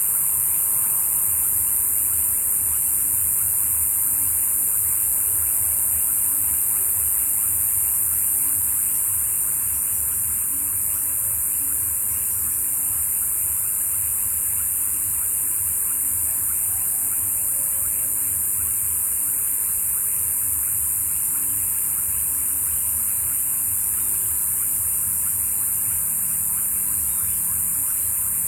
Mompós-Guataca, Mompós, Bolívar, Colombia - Ranas en la vía a Guataca
Al atardecer, en este sector inundaba del río Magdalena se escuchan los insectos, aves y ranas que habitan la zona.
May 2022